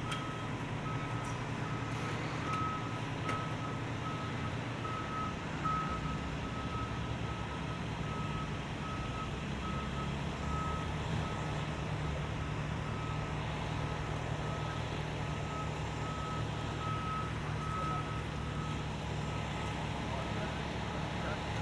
BU Union, Vestal, NY, USA - Binghamton University Union Bus Stop
A populated bus stop used by hundreds of students. The rumbling of buses followed by the occasional conversations of university students. Recorded with binaural microphones.